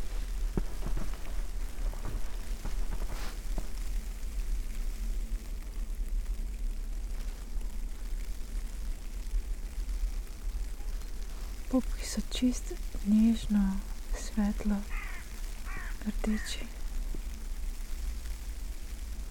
sonopoetic path, Maribor, Slovenia - walking poem
snow, walk, spoken words, soreness and redness